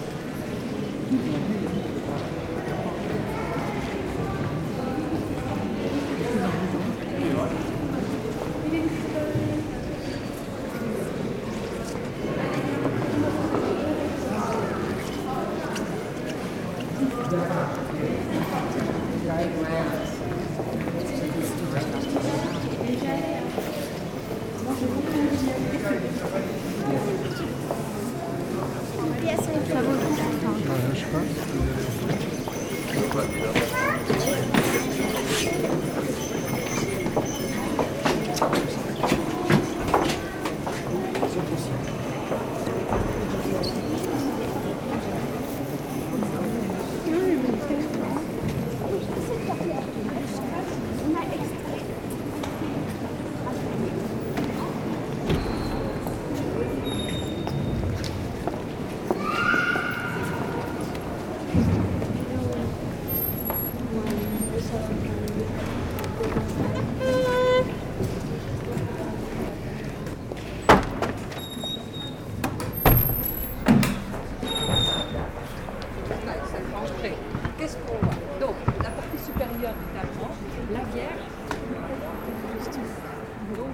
Chartres, France - The cathedral
A quiet day inside the Chartres cathedral.